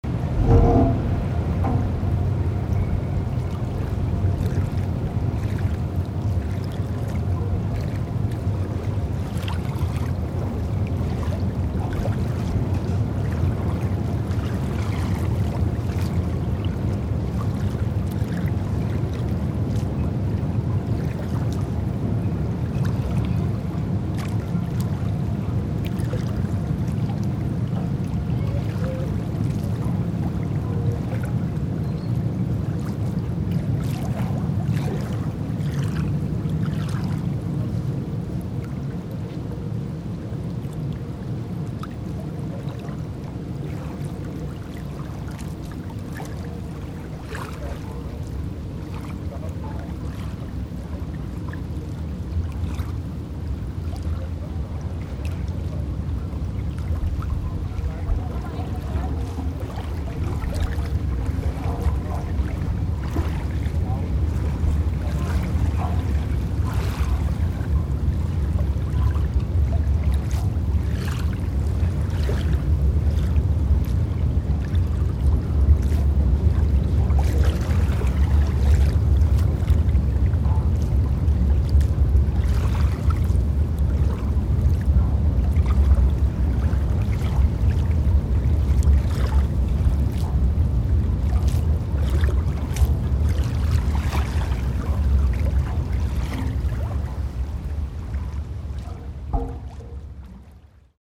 cologne, rhine side, on a swimming dockstation
on a swimming dock for ships - the rhine and the metall construction
social ambiences/ listen to the people - in & outdoor nearfield recordings